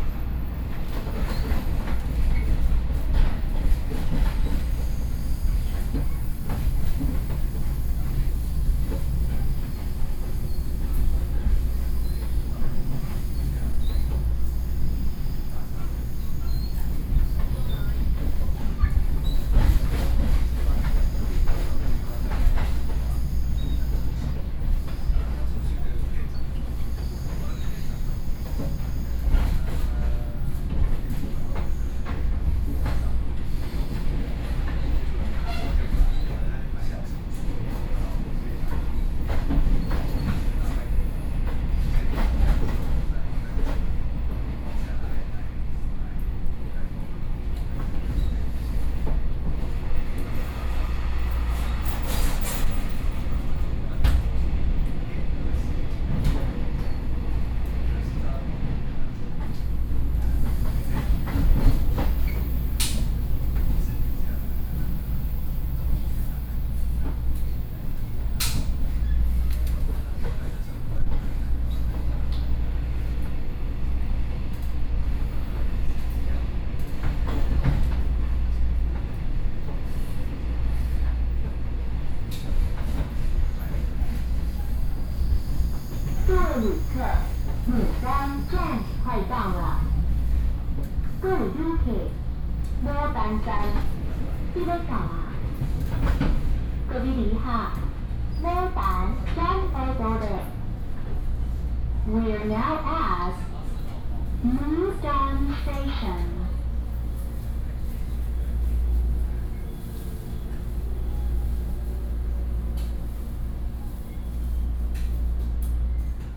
Mudan, Shuangxi - Inside the train
Inside the train, Ordinary EMU, Sony PCM D50 + Soundman OKM II
瑞芳區 (Ruifang), 新北市 (New Taipei City), 中華民國, 29 June 2012, 17:46